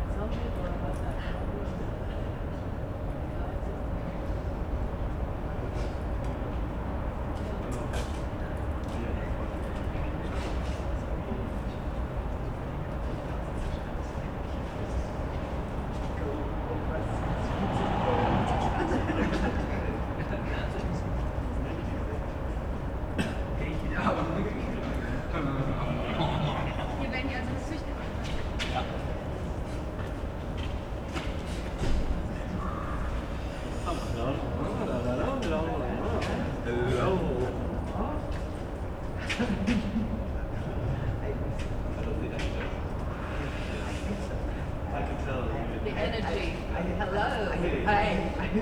berlin, lausitzer str.
backyard, sunday night, some people waiting in front of cinema. end of an unsuccessful night trip to find a place with almost no foreground sounds, but mostly city hum. recorded at expressively high levels.